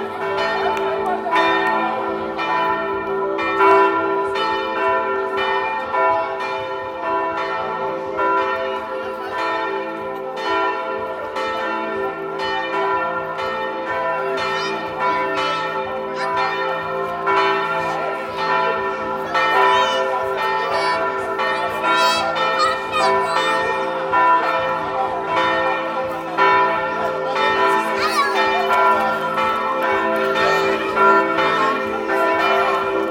Christuskirche, Hamm, Germany - Yes Afrika Festival...
… we are at the community hall of a Lutheran church, the “Christuskirche”, in Hamm West… a large very colourful audience is gathered here… many in African attire… people are streaming in and out of the hall, children running around… it’s Saturday, church bells are ringing, often… mixing in with the Festival’s multilingual voices… and the constant beat from the hall… it’s the Yes Afrika Festival 2014…